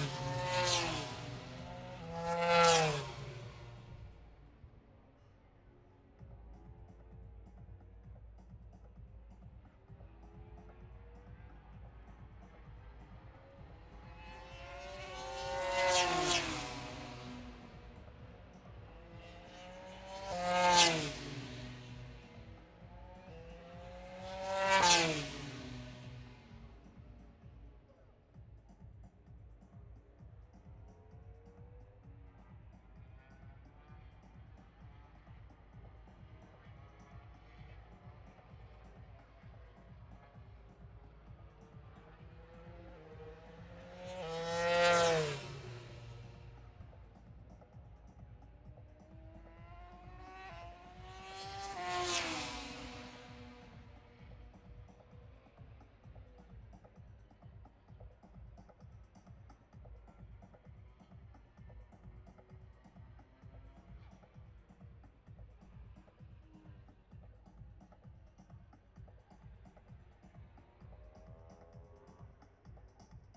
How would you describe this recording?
british motorcycle grand prix 2022 ... moto grandprix free practice three ... bridge on wellington straight ... dpa 4060s clipped to bag to zoom h5 ... plus disco ...